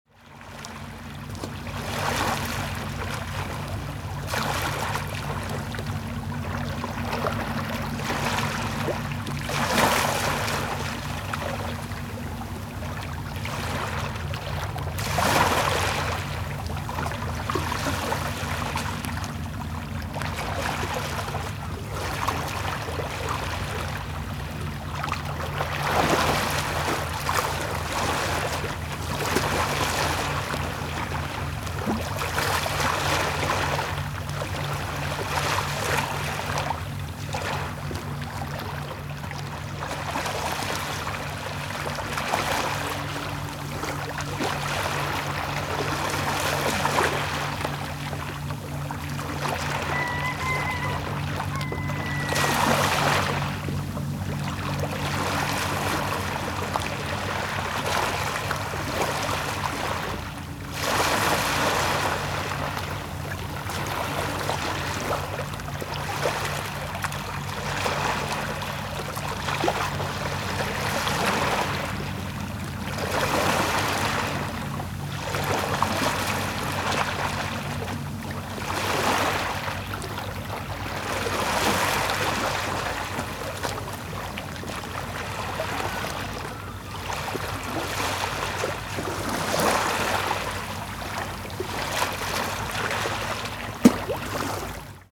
Petite jetée derrière l'hôtel Ibis. Ressac de la mer de part et d'autre de la jetée, et drone oscillant et grave d'un chalutier lointain.
Roscoff, France, 5 July, 08:20